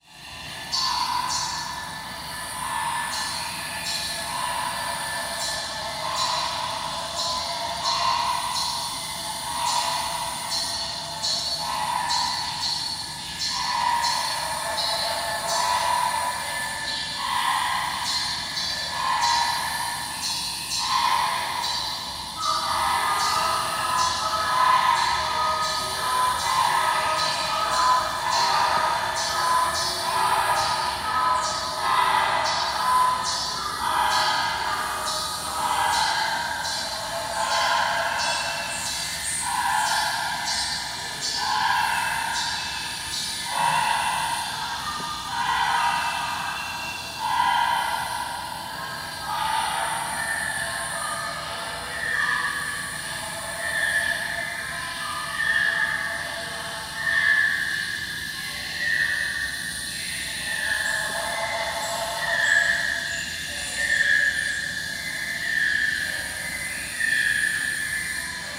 Stephen and Peter Sachs Museum, St. Louis, Missouri, USA - Welcome Home Habitat
"Welcome Home Habitat" sound installation by Kevin Harris. Part of the Botanical Resonance: Plants and Sounds in the Garden exhibition in the Henry Shaw Museum (renamed the Stephen and Peter Sachs Museum after its restoration) at the Missouri Botanical Gardens.
Missouri, United States, 6 August 2022, ~14:00